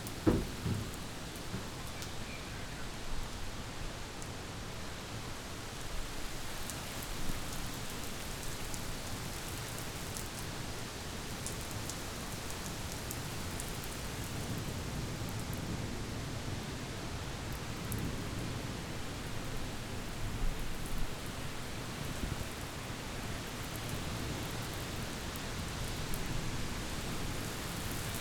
This short clip starts before the rain arrives. The wind in the trees and a near constant rumble of thunder can be heard. Then there is a jet like sounding roar that kicks up just before the rain starts. Then heavy rain begins and you can hear me moving the microphone out of the rain which is blowing in. Eventually the rain blows into the garage from which I was recording too much and I stop the recording.
Minnesota, United States, 27 August 2022, ~19:00